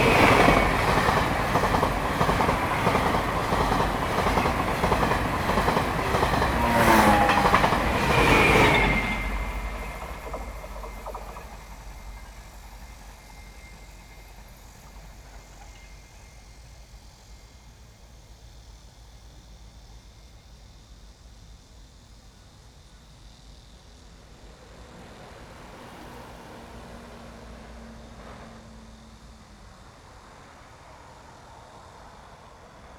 {"title": "民富路三段, Yangmei Dist. - in the railroad track side", "date": "2017-08-12 16:00:00", "description": "in the railroad track side, traffic sound, birds sound, Cicada cry, The train runs through\nZoom H2n MS+XY", "latitude": "24.93", "longitude": "121.10", "altitude": "122", "timezone": "Asia/Taipei"}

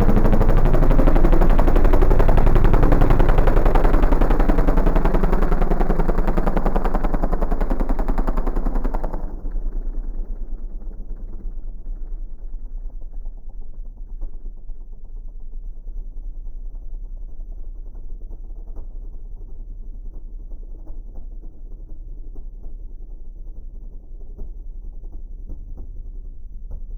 {"title": "Silverstone Circuit, Towcester, UK - 250cc mbikes slowed down ...", "date": "2017-08-25 13:45:00", "description": "British Motorcycle Grand Prix ... recorder has the options to scrub the speed of the track ... these are 250cc singles at 1/8x ...", "latitude": "52.07", "longitude": "-1.01", "altitude": "158", "timezone": "Europe/London"}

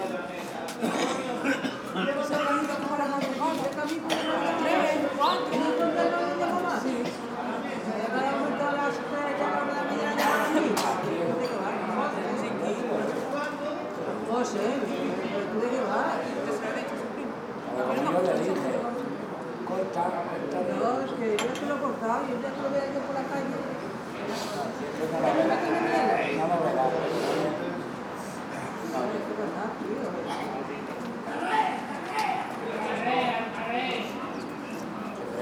{"title": "Puig de Sant Pere, Palma, Illes Balears, Spain - Cafe Arenas, Placa de La Drassana, Palma Mallorca.", "date": "2017-03-11 09:18:00", "description": "Cafe Arenas, Placa de La Drassana, Palma Mallorca. Sont M10, built in mics.", "latitude": "39.57", "longitude": "2.64", "altitude": "8", "timezone": "Europe/Madrid"}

